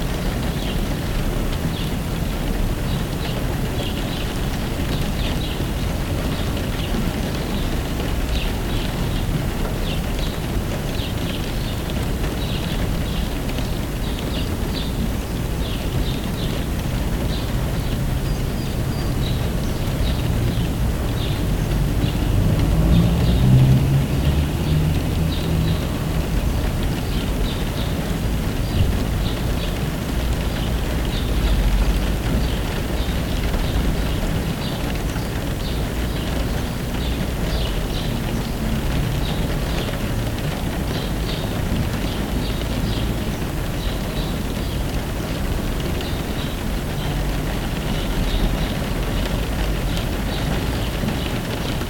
Heinrichstraße, Hameln, Germany - WET SNOWNING & BIRD SINGING (Recorded from inside the car)
It's a kind of wet snow recording from inside a car. A mix of rain and snow, what is also well heard in the recording. While I was recording the snow also bird were pretty loud, which are well heard in the mix.
TASCAM DR100-MKIII
MikroUSI Omni Directional Microphones
Landkreis Hameln-Pyrmont, Niedersachsen, Deutschland, 9 March